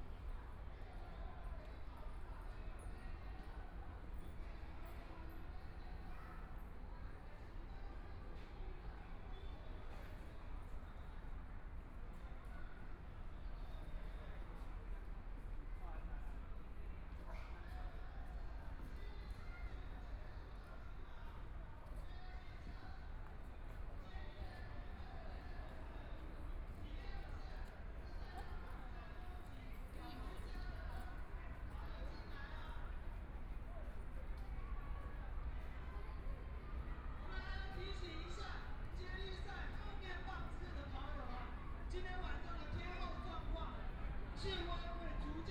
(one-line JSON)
{"title": "新生公園, Taipei EXPO Park - Walking through the park", "date": "2014-02-15 15:06:00", "description": "Walking through the park, Jogging game, Binaural recordings, ( Keep the volume slightly larger opening )Zoom H4n+ Soundman OKM II", "latitude": "25.07", "longitude": "121.53", "timezone": "Asia/Taipei"}